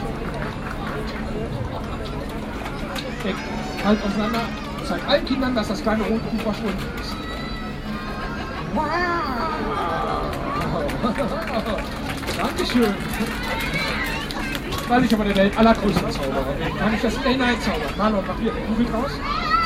Löhrrondell, Magician, Koblenz, Deutschland - Löhrrondell 11, Magician
Binaural recording of the square. Elenvth of several recordings to describe the square acoustically. At the children's day the square was full of entertainment for children, beyond others a magician whose show is audible. There is one omission since the children were too loud...
Koblenz, Germany, May 2017